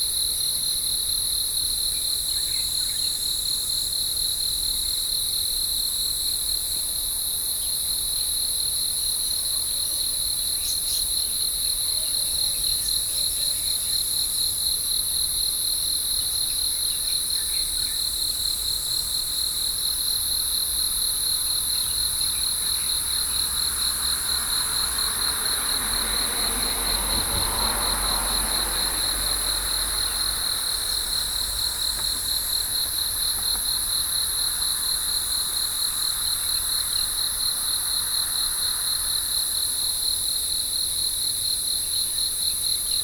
台北市士林區溪山里 - Early in the morning
Frogs calling, Cicadas, Sony PCM D50 + Soundman OKM II
信義區, 台北市 (Taipei City), 中華民國, 23 June 2012, 05:35